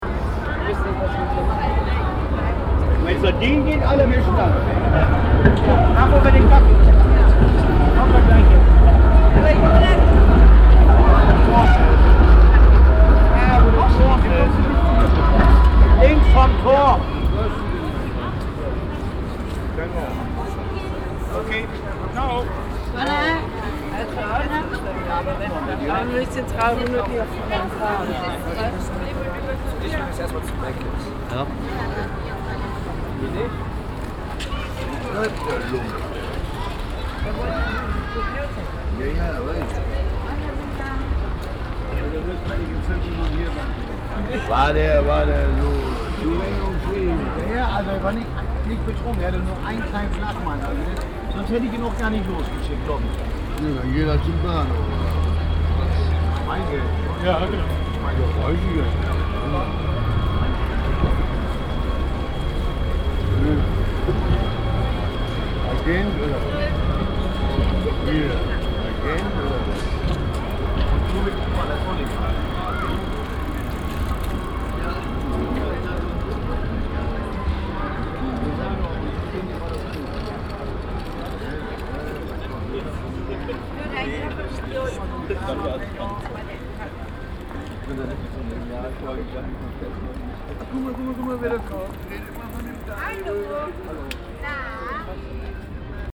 Altstadt, Bremen, Deutschland - bremen, domsheide, tram station
At the tram and busstation Domsheide. The sound of a tram passing by and the conversation of local street people that gather at the street corner.
soundmap d - social ambiences and topographic field recordings